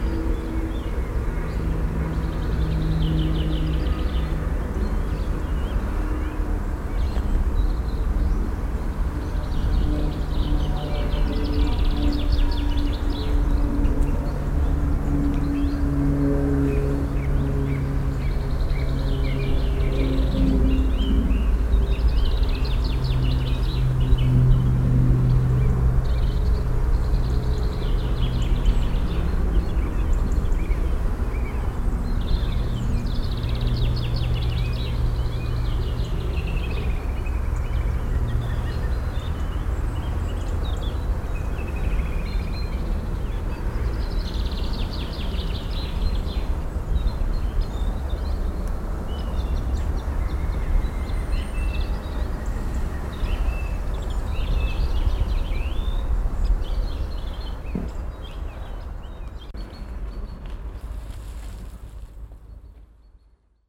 monheim, schleider weg, weg am feld
am frühen abend, blick auf felder, im hintergrund verkehrsgeräusche der nahen autobahn
soundmap nrw:
social ambiences, topographic field recordings